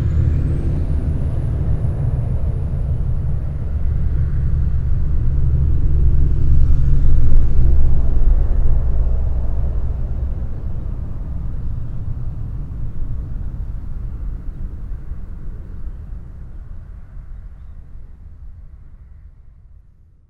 Rogerville, France - The trucks road
Walking to the Normandie bridge, we had to walk along this road. This is an enormous road intended for trucks. There's one car for twenty trucks. Recording of this 'mad road' !
21 July 2016, ~08:00